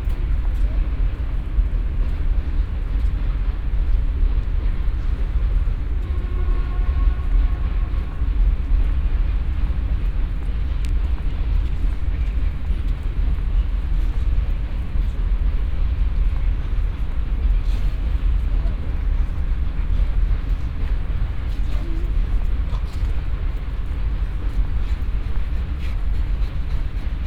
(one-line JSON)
{"title": "UAM Campus UAM Morasko - buzz around the campus", "date": "2014-11-11 12:55:00", "description": "(binaural)\nsoundwalk around the campus of the UAM. the area is packed with various power generators and transformers. it's impossible to find a place around the campus where one doesn't hear the electric buzz of machinery and power circuits. a raft from one mass of drone into another. around 3:30 i'm walking by a bunch of trees. the rustle of leaves pierces through the electric rumble.", "latitude": "52.47", "longitude": "16.92", "altitude": "94", "timezone": "Europe/Warsaw"}